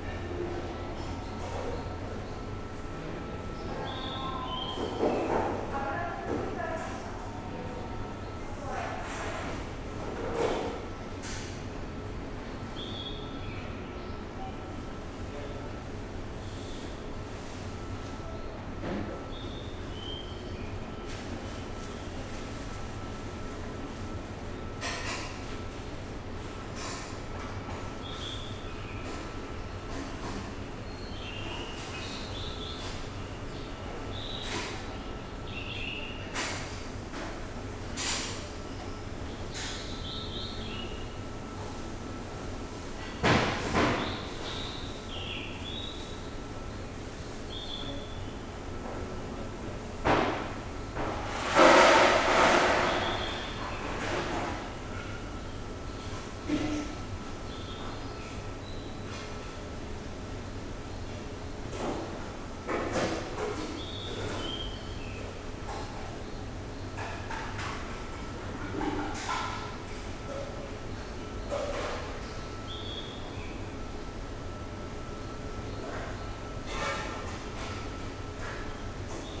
古込 Narita, Chiba Prefecture, Japonia - food court
ambience of the food court at the Narita airport early in the morning. Restaurants and observation desk were still closed. You can hear rumbling coming from a few kitchens as cooks already arrived and do some preparations. (roland r-07)